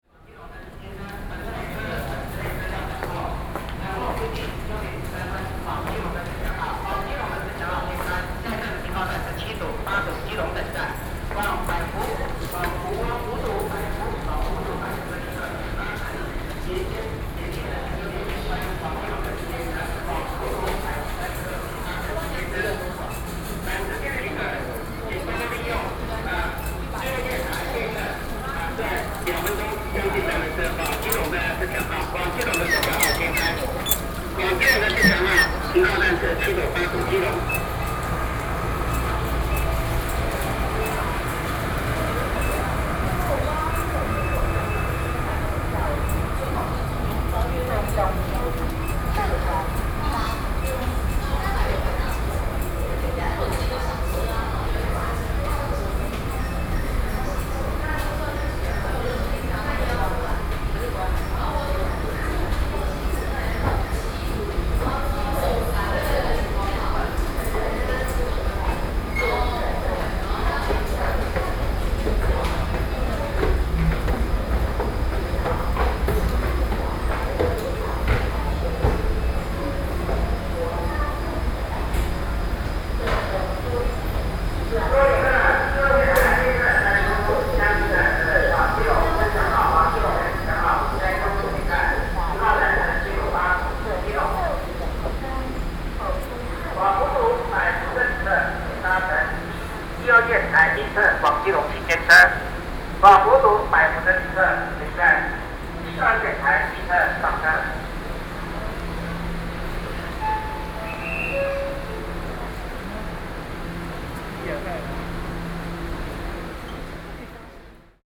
Xizhi, New Taipei City - Into the platform
Railway platforms, Train broadcasting, Sony PCM D50 + Soundman OKM II
台北市 (Taipei City), 中華民國, 29 June 2012, 19:15